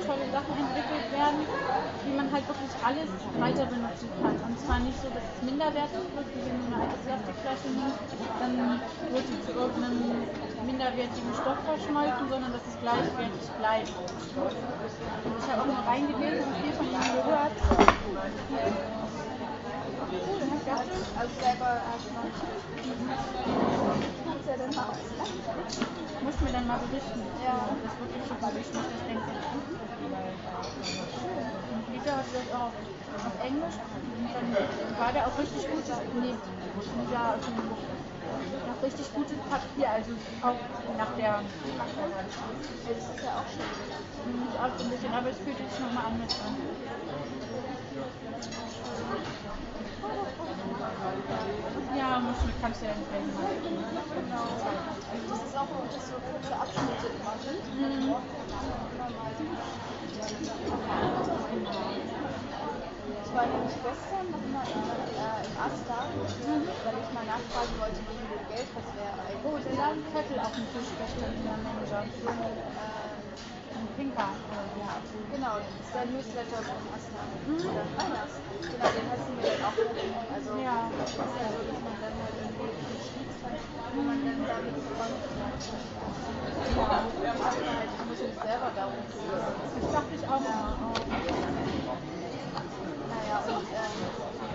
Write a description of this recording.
Das menschliche Ohr besitzt ca. 30.000 afferente Nervenfasern, die die Verarbeitung der eintreffenden Schallwellen zum Gehirn weiterleiten. Aber es besitzt auch ca. 500 efferente Nervenfasern, die das Gehör willentlich steuern können - das ist selektive Aufmerksamkeit. In der überfüllten Mensa bleibt so noch Konzentration für ein Gespräch mit der besten Freundin. Mein Dictaphon kann das natürlich nicht.